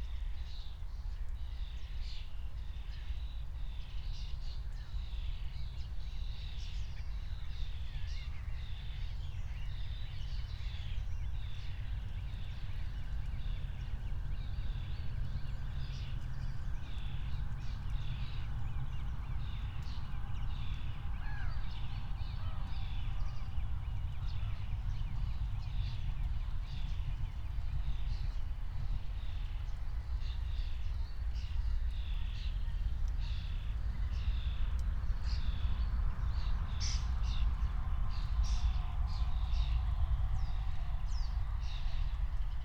10:17 Berlin, Buch, Moorlinse - pond, wetland ambience